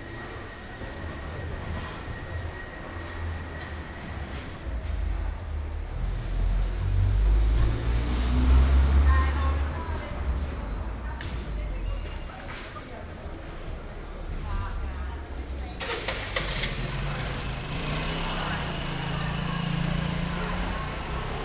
Ascolto il tuo cuore, città. I listen to your heart, city. Several chapters **SCROLL DOWN FOR ALL RECORDINGS** - Saturday market without plastic waste in the time of COVID19, Soundwalk
"Saturday market without plastic waste in the time of COVID19", Soundwalk
Chapter XCII of Ascolto il tuo cuore, città. I listen to your heart, city
Saturday, May 30th 2020. Walking to Corso Vittorio Emanuele II and in outdoor market of Piazza Madama Cristina, eighty-one days after (but day twenty-seven of Phase II and day fourteen of Phase IIB and day eight of Phase IIC) of emergency disposition due to the epidemic of COVID19.
Start at 2:52 p.m. end at 3:19 p.m. duration of recording 27'05''
The entire path is associated with a synchronized GPS track recorded in the (kml, gpx, kmz) files downloadable here: